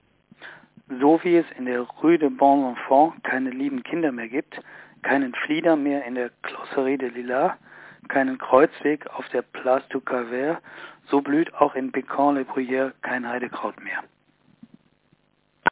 Bécon-les-Bruyères - Bécon-les-Bruyères, Emmanuel Bove 1927
Paris, France